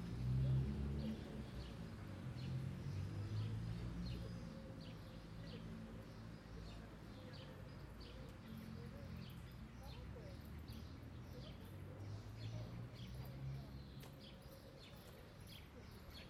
Ικονίου, Λυκούργου Θρακός και, Ξάνθη, Ελλάδα - Park Megas Alexandros/ Πάρκο Μέγας Αλέξανδρος- 09:30
Quiet ambience, birds singing, person passing by, light traffic.